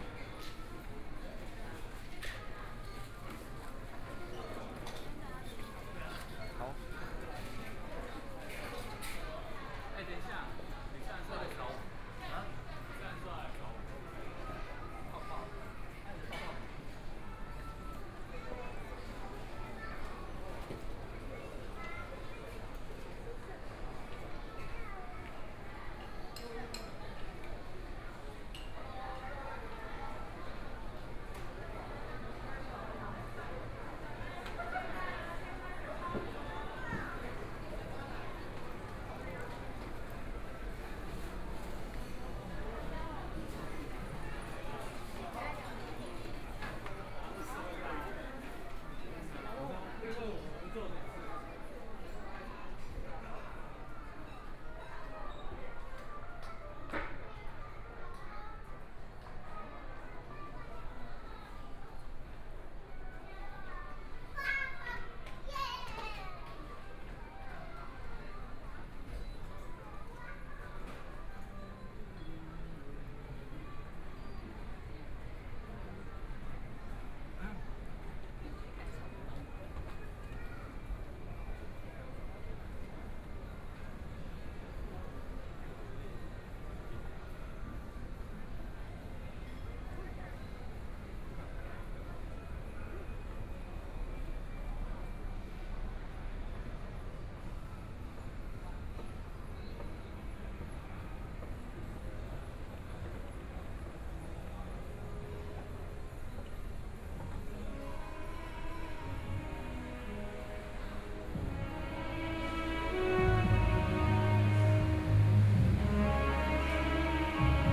Walking around the shopping mall, Binaural recordings, Zoom H4n+ Soundman OKM II